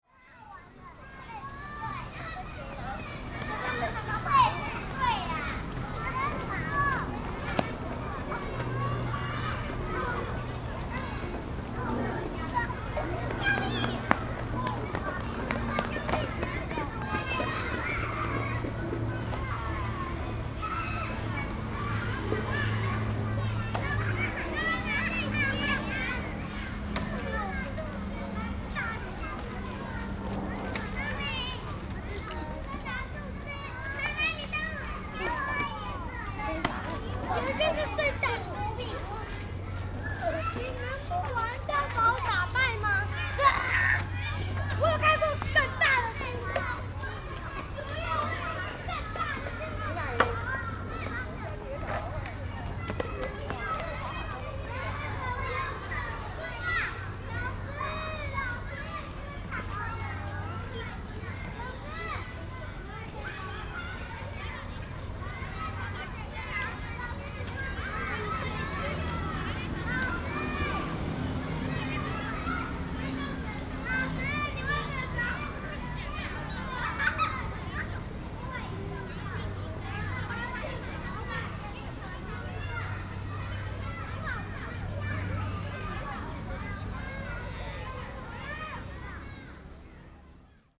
Nangang Park, Taipei - Kindergarten field trip
Kindergarten field trip, Rode NT4+Zoom H4n
6 March 2012, ~14:00